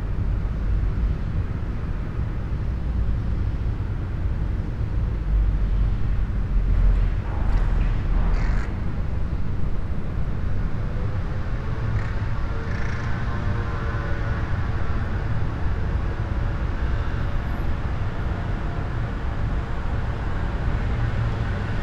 {
  "title": "Via Von Bruck, Porto Nuovo, Trieste - street to the porto authorities",
  "date": "2013-09-09 15:50:00",
  "latitude": "45.64",
  "longitude": "13.77",
  "altitude": "7",
  "timezone": "Europe/Rome"
}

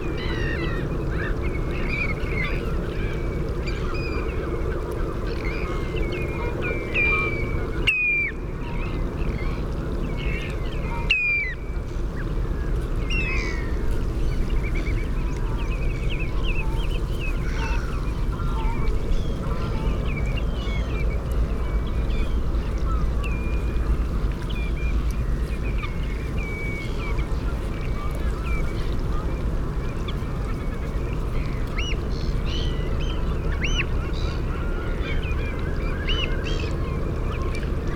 {
  "title": "Woodbridge, UK - Cuckolds Lagoon soundscape ...",
  "date": "2003-08-26 06:00:00",
  "description": "Cuckolds lagoon soundscape ... RSPB Havergate Island ... fixed parabolic to minidisk ... bird calls ... song from ... canada goose ... curlew ... dunlin ... redshank ... oystercatcher ... ringed plover ... grey plover ... godwit sp ..? black-headed gull ... herring gull ... grey heron ... sandwich tern ... meadow pipit ... lots of background noise ... waves breaking on Orfordness ... ships anchor chains ...",
  "latitude": "52.08",
  "longitude": "1.53",
  "timezone": "Europe/London"
}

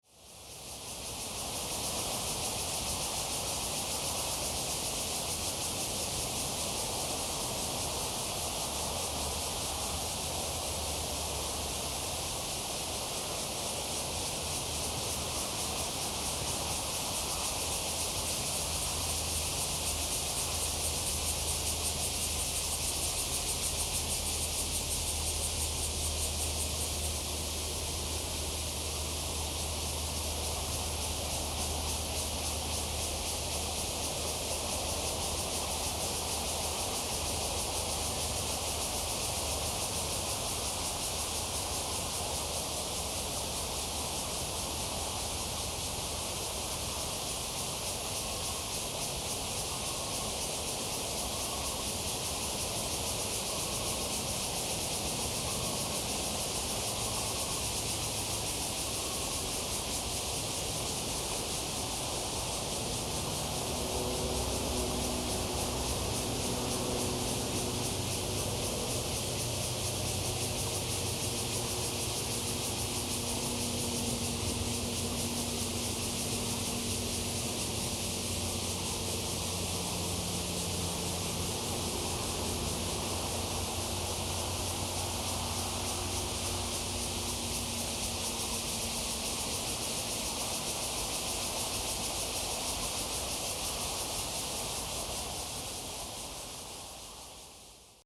{
  "title": "富陽自然生態公園, 大安區, 台北市 - Bird calls and Cicadas cry",
  "date": "2015-07-17 07:35:00",
  "description": "in the park, Bird calls, Cicadas cry, Traffic Sound\nZoom H2n MS+XY",
  "latitude": "25.01",
  "longitude": "121.56",
  "altitude": "35",
  "timezone": "Asia/Taipei"
}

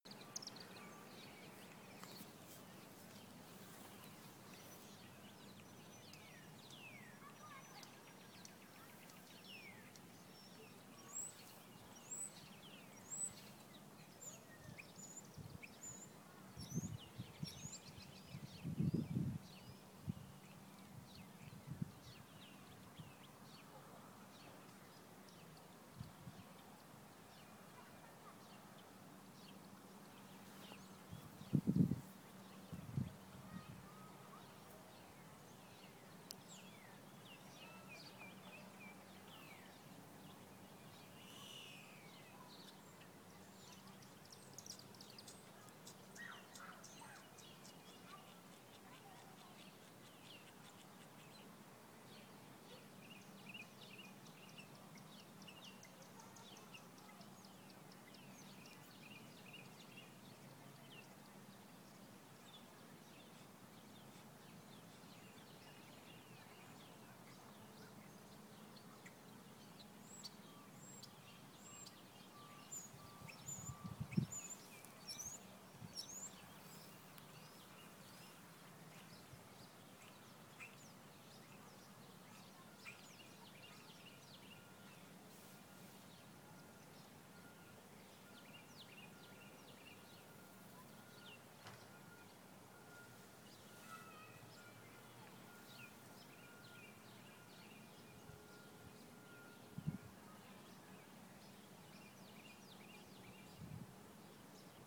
{
  "title": "Whispering Pines Bed & Breakfast, Nebraska City, NE, USA - Bed and Breakfast",
  "date": "2013-05-13 13:30:00",
  "description": "Recorded with Zoom H2. Recordings from Nebraska City while in residence at the Kimmel Harding Nelson Center for the Arts in Nebraska City from May 13 – May 31 2013. Source material for electro-acoustic compositions and installation made during residency. We had lunch for our meet and greet at the bed and breakfast. Recorded after lunch.",
  "latitude": "40.68",
  "longitude": "-95.87",
  "altitude": "319",
  "timezone": "America/Chicago"
}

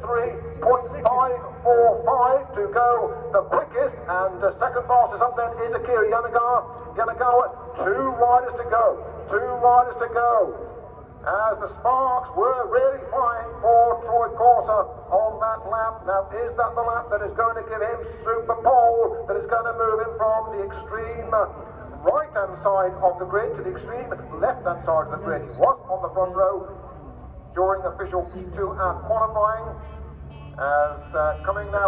{
  "title": "Unnamed Road, Derby, UK - WSB 1999 ... Superbikes ... Superpole ... (contd) ...",
  "date": "1999-05-01 16:30:00",
  "description": "WSB 1999 ... Superbikes ... Superpole ... (contd) ... one point stereo to minidisk ...",
  "latitude": "52.83",
  "longitude": "-1.37",
  "altitude": "97",
  "timezone": "Europe/London"
}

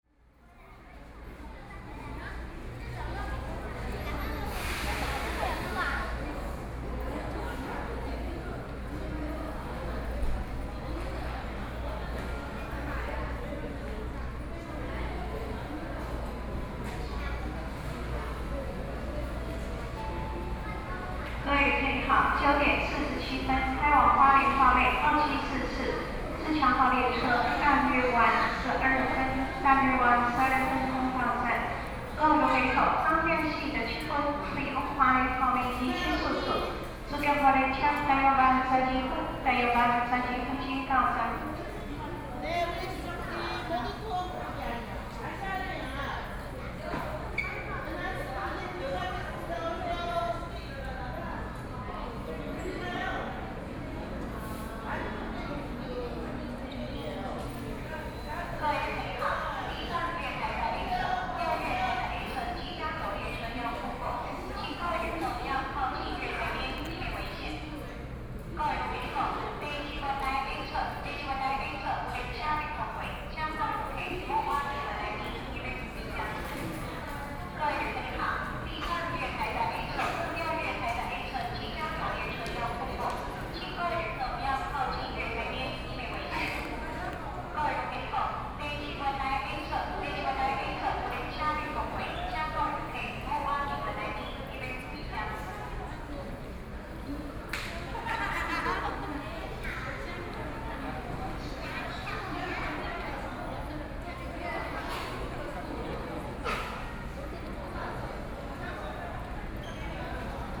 {
  "title": "Yilan Station, Taiwan - In the station hall",
  "date": "2013-11-05 09:48:00",
  "description": "In the station hall, Japanese tourists sound, Stations broadcast audio messages, Train traveling through the platform, Binaural recordings, Zoom H4n+ Soundman OKM II",
  "latitude": "24.75",
  "longitude": "121.76",
  "altitude": "12",
  "timezone": "Asia/Taipei"
}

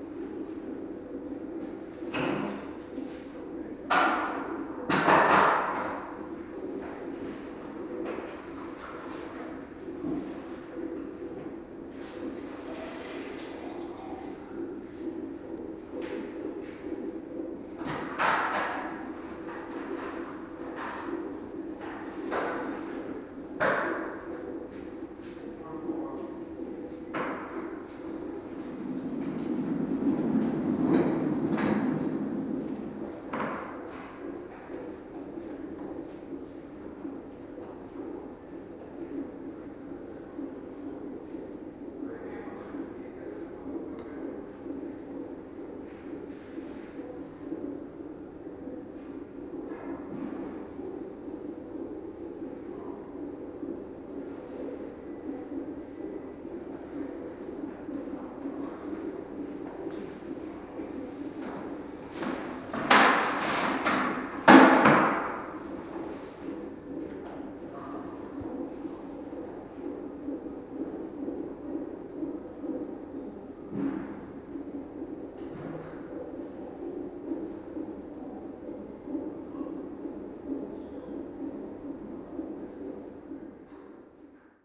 {
  "title": "Lithuania, Utena, maternity ward",
  "date": "2012-02-11 09:40:00",
  "description": "in the corridor of maternity ward in local hospital. you can hear the cyclic sound of the infant (in the womb of the mother) through electronic stethoscope...just three days ago Ive became a father:)",
  "latitude": "55.51",
  "longitude": "25.59",
  "altitude": "119",
  "timezone": "Europe/Vilnius"
}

